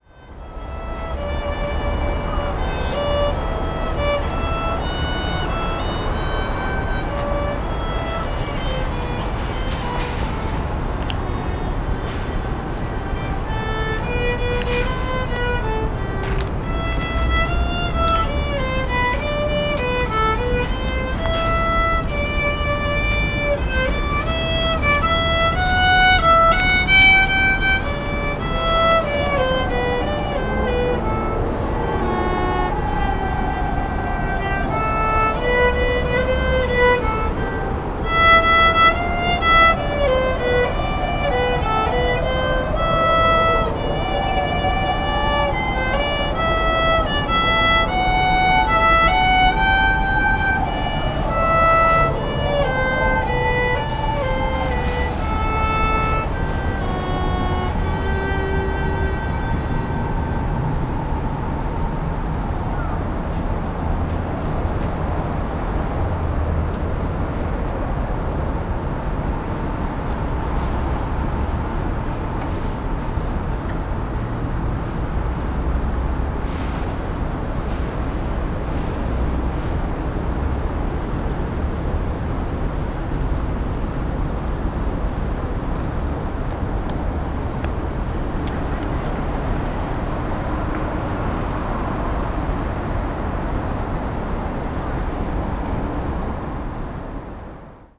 Central Park, Shinjuku-ku, Tokyo - violin practice
lady practicing violin in a small arbor. unfortunately finished as soon as I walked by with the recorder. (roland -r07)